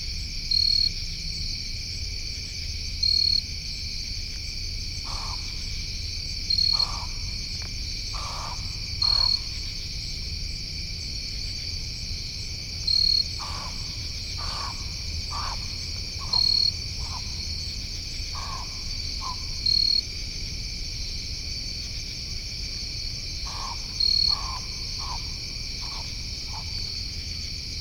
Commercial Township, NJ, USA - deer field exclamations
Midnight forest-field setting. Deer hisses at meas I am nearby. Crickets, katydids chant. A pine barrens tree frog can be faintly heard honking in the distance (headphones for that). Something is crunching near my placed recorder. I believe this may be a turtle.
2 September 2016, ~01:00